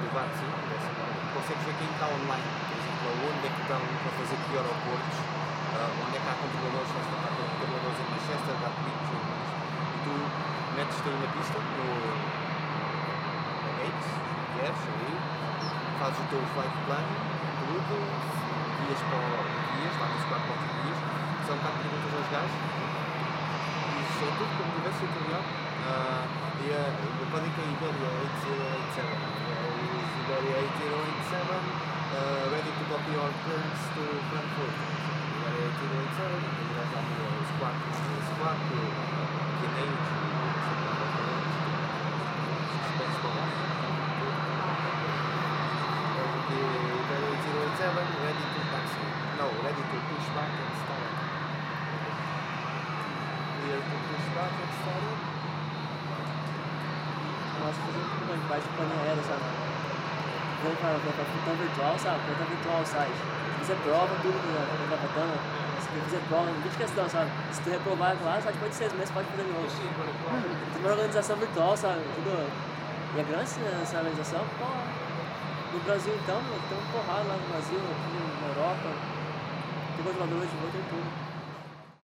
Manchester International Airport - The Airport Pub
A pub called The Airport, just on the side of the runway 23R, Manchester International Airport.